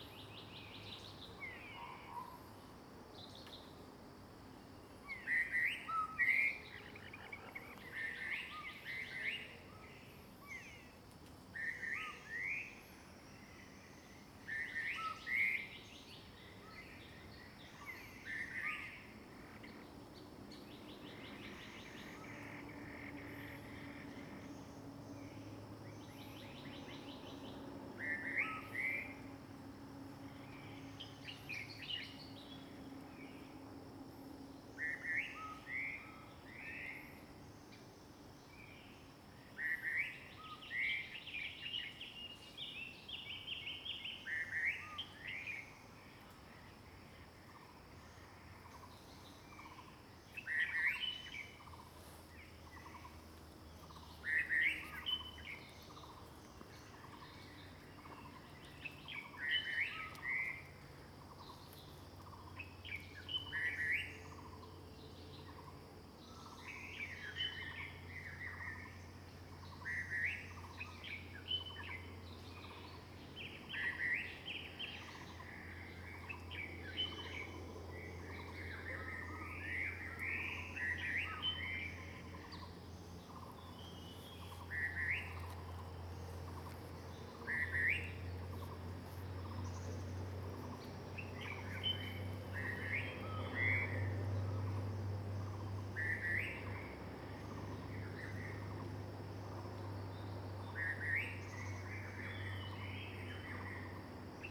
Shuishang Ln., 桃米里, Puli Township - Bird sounds
Bird sounds, Traffic Sound, In the woods
Zoom H2n MS+XY
19 April 2016, 07:08